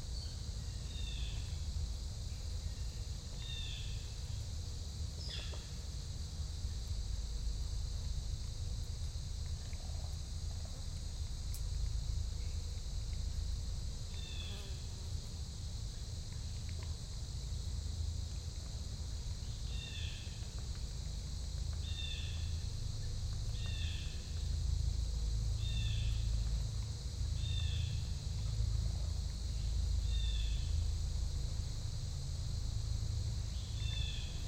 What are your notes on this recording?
Natural preserve road through forest and, eventually, marshland. Quiet roadside recording builds to an encounter.